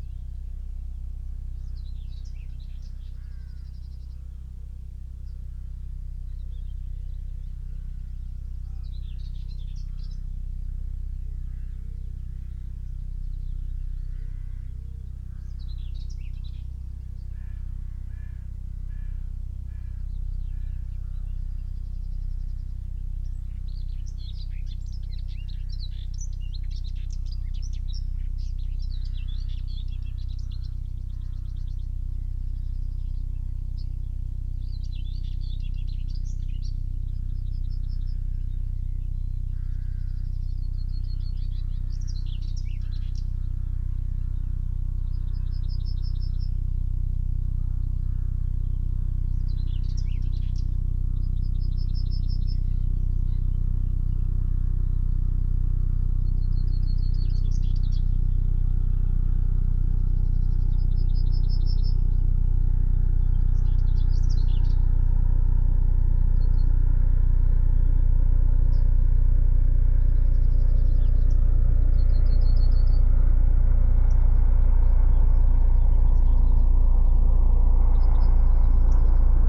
Unnamed Road, Malton, UK - dawn patrol ... hill top ...
dawn patrol ... hill top ... xlr SASS to Zoom H5 ... police helicopter flew over bird recording gear left out previously ...... bird calls ... song ... rook ... whitethroat ... yellowhammer ... skylark ...